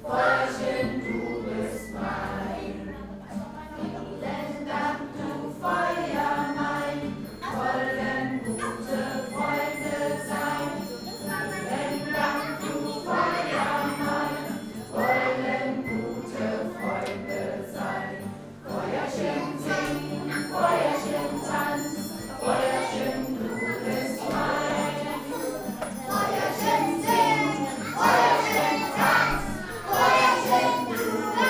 Hasenheide, Kreuzberg, Berlin - kindergarden celebration
late St.Martin celebration in a multi-cultural kindergarden in Berlin Kreuzberg.
30 November, 18:00